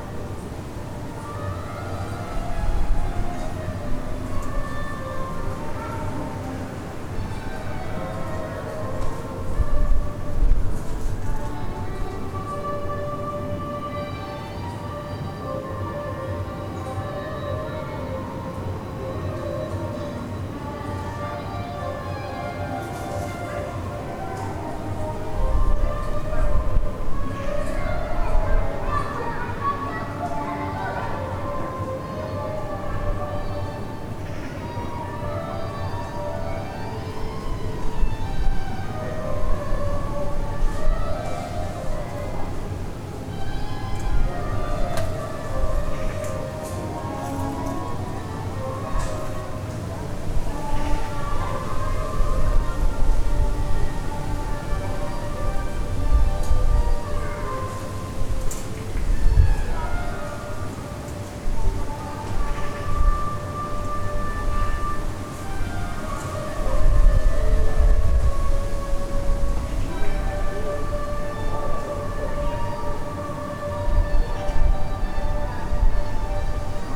Rotterdam, Schiekade
recording from my balcony. with somebody playing soprano saxophone and distant sounds from the annual rotterdam city race.
21 August 2011, 15:31